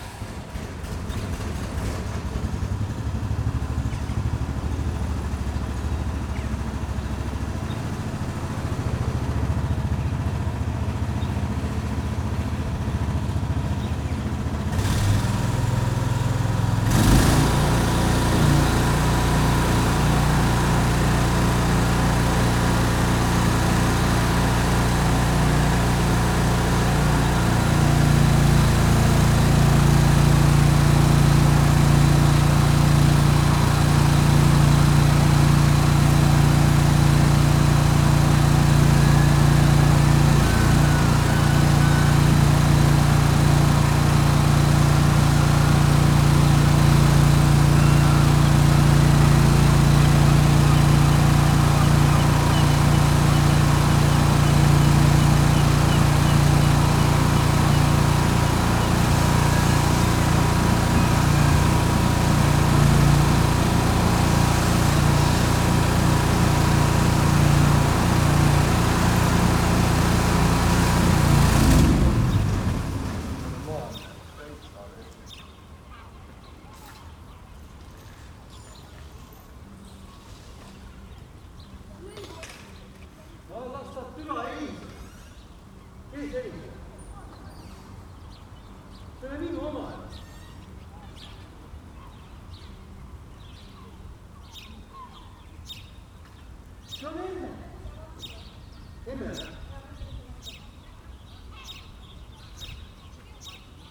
Tallinn, Oismae - motor pump
tallinn, oismae, man has trouble to activate a pump in order to empty a chemical toilet
Tallinn, Estonia